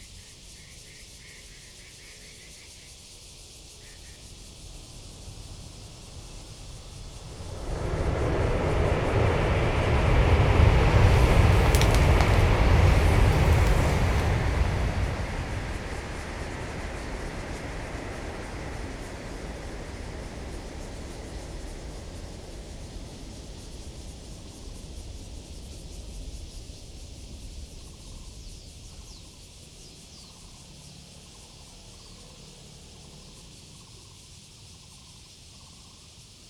羊稠坑 Yangchoukeng, Luzhu Dist. - For high - speed rail track
For high - speed rail track, Cicada and birds sound, Dog, Chicken cry, The train runs through
Zoom H2n MS+XY
Taoyuan City, Taiwan